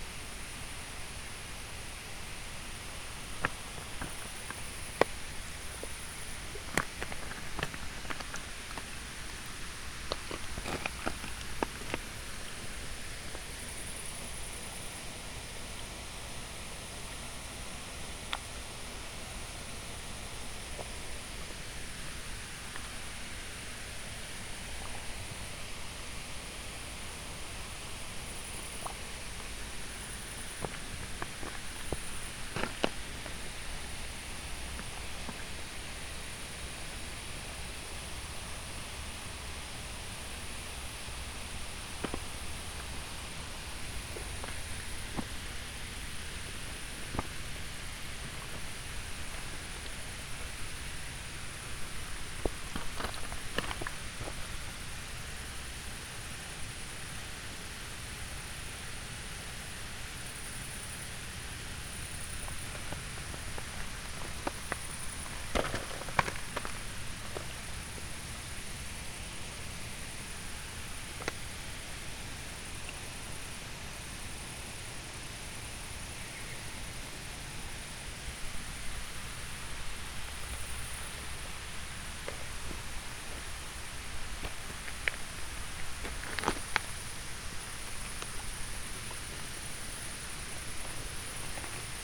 walk down the seasonal dry spring bed